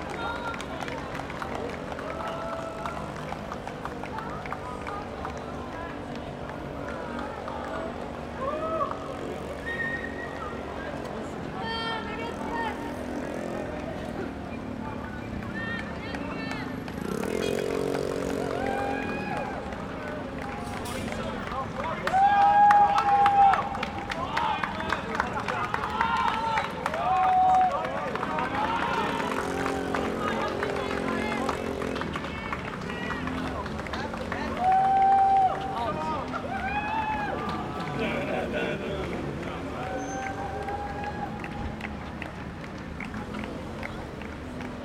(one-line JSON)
{"title": "Frankrijklei, Antwerpen, Belgium - Antwerp Night Marathon", "date": "2021-09-11 11:00:00", "description": "This is a continuous recording of the crowds cheering on the runners of the Antwerp Night Marathon, and some of the honking of frustrated car drivers stuck in a traffic jam on the other side of the street. I used a Sony PCM-D100 for this and exported with minimal processing.", "latitude": "51.22", "longitude": "4.41", "altitude": "11", "timezone": "Europe/Brussels"}